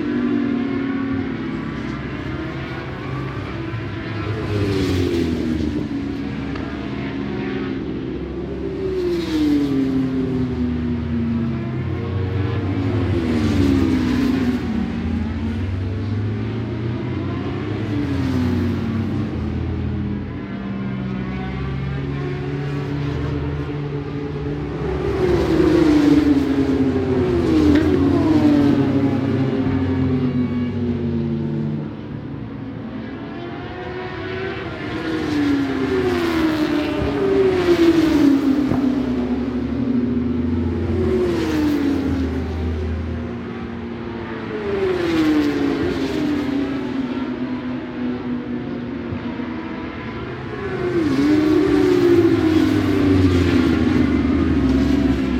British Superbikes 2005 ... 600 free practice one ... one point stereo mic to minidisk ...
West Kingsdown, Longfield, UK